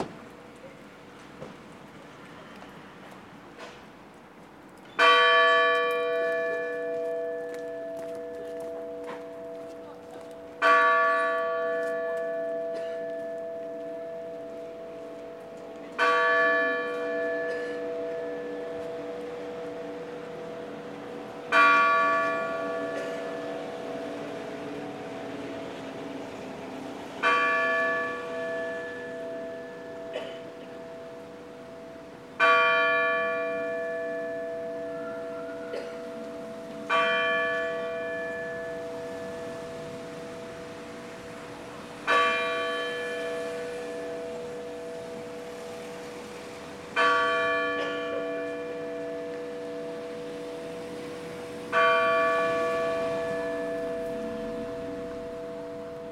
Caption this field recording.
sound recorded by members of the animation noise laboratory by zoom h4n